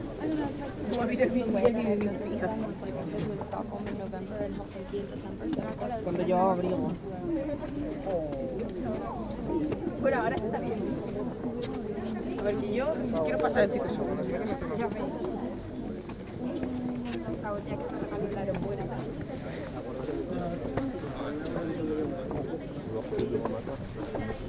Brugge, België - Crowd of tourists
An impressive crowd of tourists and the departure of the countless boats that allow you to stroll along the canals of Bruges.
Brugge, Belgium